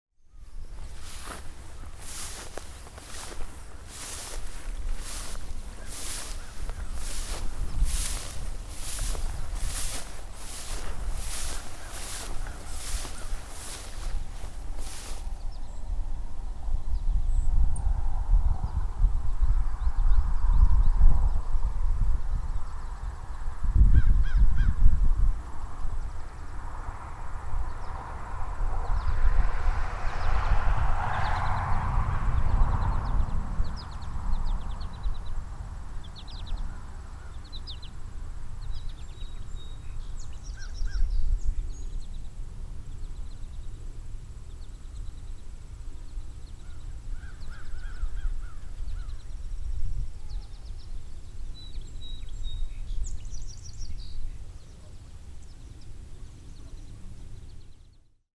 Grass Lake Sanctuary - Field Sounds
These are the sounds youll hear in this field, surrounding by growing green things.
Manchester, Michigan USA